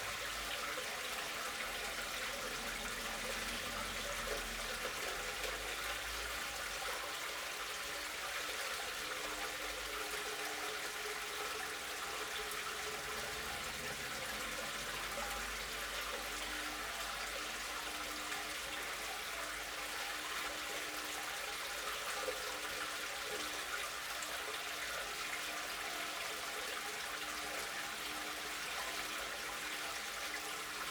Water resonating in the pond's outlet pipe, Údolní, Praha, Czechia - Water resonance in the pond's outlet pipe
The stream runs out of this pond into an underground pipe that takes it beneath the town to the river Vltava. The water flow resonates in the pipe giving this pitched metallic quality. Individual car can be heard passing by on the road nearby.